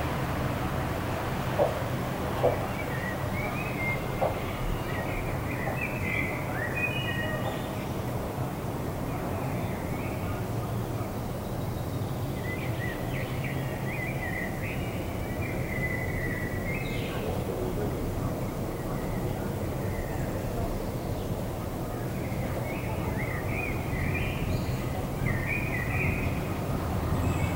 recorded june 22nd, 2008, around 8 p. m.
project: "hasenbrot - a private sound diary"
Cologne, Germany